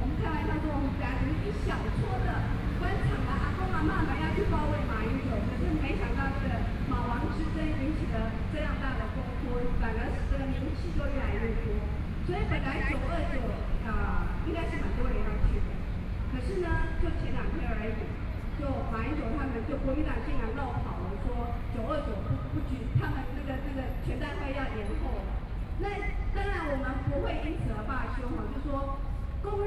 Chiang Kai-Shek Memorial Hall - Protest
Off factory workers to protest on behalf of the connection description published, Traffic Noise, Sony PCM D50 + Soundman OKM II